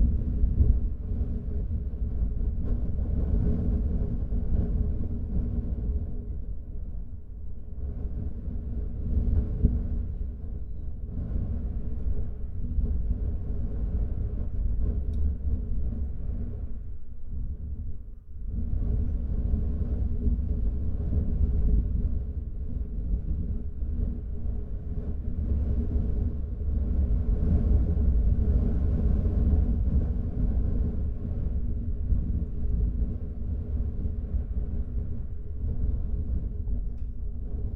Patmos, Liginou, Griechenland - Wind im Kamin
Im Haus. Draussen geht der Meltemi.
Patmos, Greece, 5 October